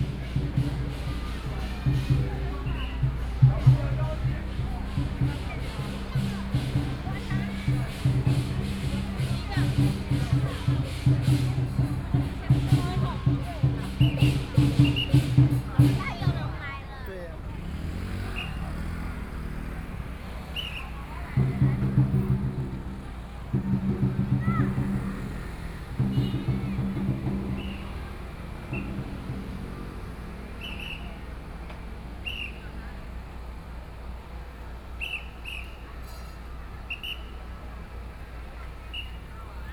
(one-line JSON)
{"title": "Lixin Rd., Yilan City 中正里 - Festival", "date": "2014-07-26 20:33:00", "description": "Road corner, Festival, Traffic Sound\nSony PCM D50+ Soundman OKM II", "latitude": "24.76", "longitude": "121.75", "altitude": "18", "timezone": "Asia/Taipei"}